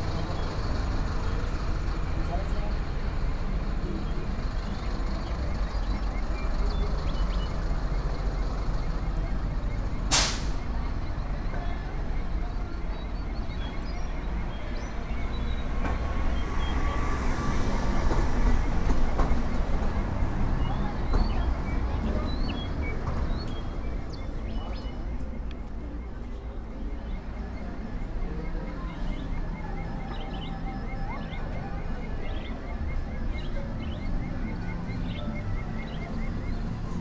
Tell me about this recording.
I made this recording on September 8th, 2021, at 2:43 p.m. I used a Tascam DR-05X with its built-in microphones and a Tascam WS-11 windshield. Original Recording: Type: Stereo, Caminando por la Av. Miguel Alemán desde casi Blvd. Adolfo López Mateos hacia el Mercado Aldama, y luego caminando adentro y saliendo. Esta grabación la hice el 8 de septiembre de 2021 a las 14:43 horas.